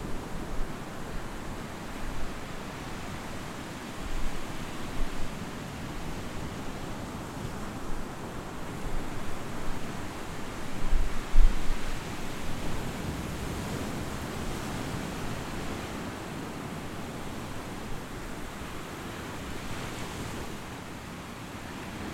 Armirolakou, Malia, Греция - Sea of Crete